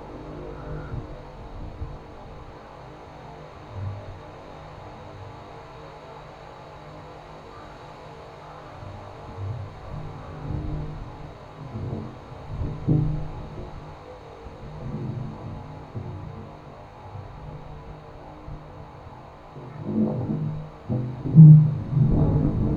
Utena, Lithuania, in the tube
small mikrophones in the metallic horizontal tube. plays of winds